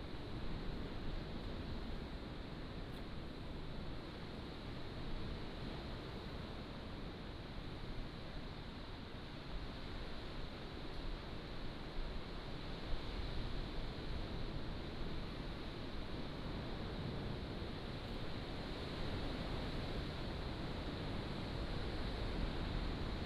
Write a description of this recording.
Standing on the edge of the cave, Sound of the waves